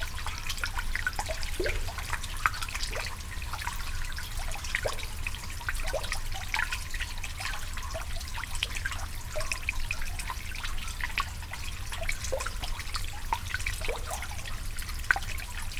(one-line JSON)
{"title": "Westwood Marsh, United Kingdom - Melodic drips with reeds above and below water", "date": "2020-07-15 16:49:00", "description": "Recorded 3 days later in exactly the same spot with the same normal and underwater mics, but with no wind. The drips are much more active and there is much less bass from the underwater mic than in the recording with strong wind. There is a small sluice at this place. The higher level water on one side easing over the barrier causes the drips whose sound is also audible under the surface.", "latitude": "52.30", "longitude": "1.65", "altitude": "1", "timezone": "Europe/London"}